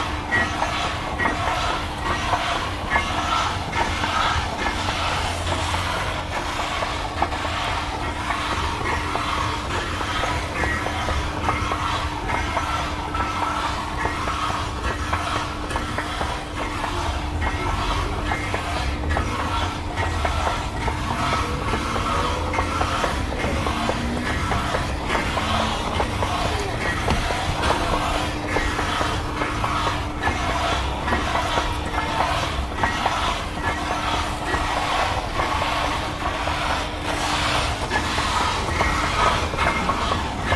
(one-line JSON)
{"title": "Köln, Friesenplatz - U5 Friesenplatz Koeln - müll auf treppe", "latitude": "50.94", "longitude": "6.94", "altitude": "56", "timezone": "GMT+1"}